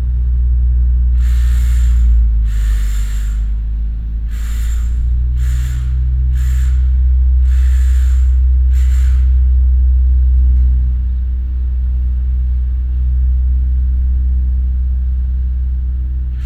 {"title": "housing complex, mateckiego street - drilling", "date": "2019-09-12 09:40:00", "description": "(binaural recording) excavator in operation as well as some drilling on the construction site. (roland r-07 + luhd PM-01 bins)", "latitude": "52.46", "longitude": "16.90", "altitude": "98", "timezone": "Europe/Warsaw"}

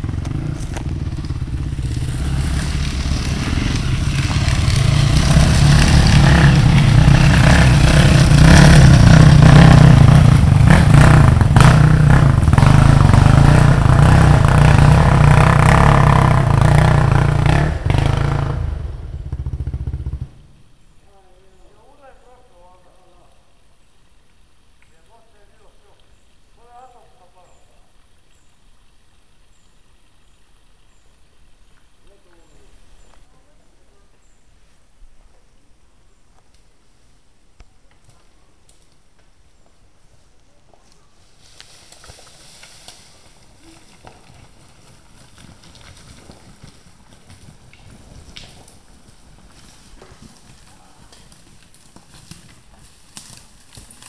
{"title": "Klana, Malinica 2, motcross", "date": "2008-07-27 11:34:00", "description": "Yamaha 430 going uphill and then downhill with engine turned off on rough terrain(-.", "latitude": "45.47", "longitude": "14.38", "altitude": "624", "timezone": "Europe/Ljubljana"}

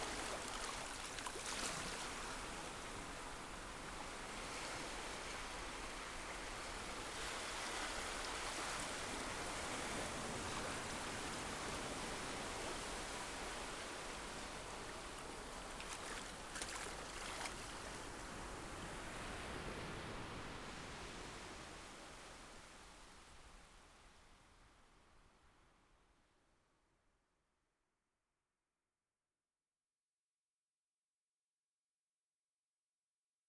{"title": "North Sea, Scheveningen - sea waves collage", "latitude": "52.12", "longitude": "4.29", "timezone": "Europe/Berlin"}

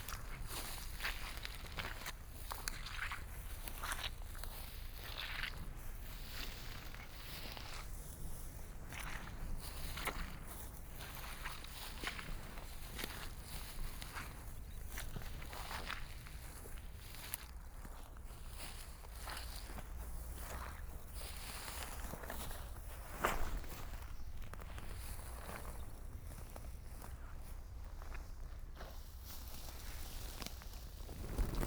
{"title": "Glasson Moss, Cumbria, UK - Marsh Walk", "date": "2013-04-24 15:40:00", "description": "Walking through heather, Sphagnum moss, peat, water\nST350, binaural decode\nGlasson Moss Nature Reserve", "latitude": "54.94", "longitude": "-3.20", "altitude": "13", "timezone": "Europe/London"}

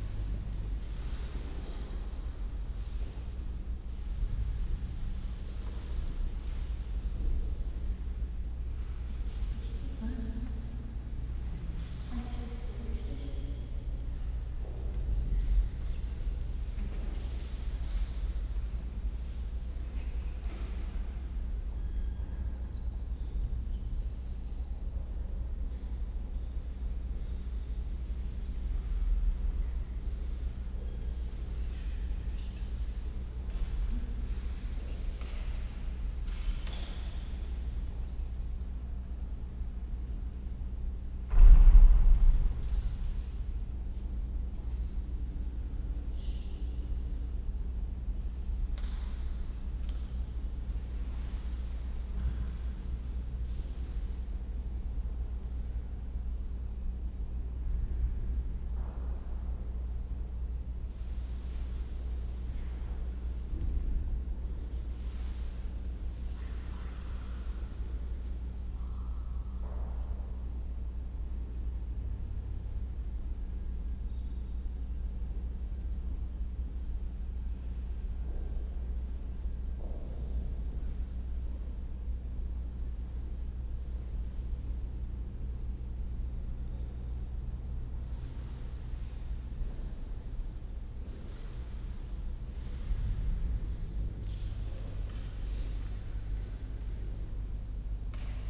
{"title": "St.-Nikolai-Kirche, Alter Markt, Kiel, Deutschland - Quiet inside St. Nikolai church, Kiel, Germany", "date": "2017-10-02 15:07:00", "description": "Binaural recording, Zoom F4 recorder with OKM II Klassik microphone and A3-XLR adapter.", "latitude": "54.32", "longitude": "10.14", "altitude": "8", "timezone": "Europe/Berlin"}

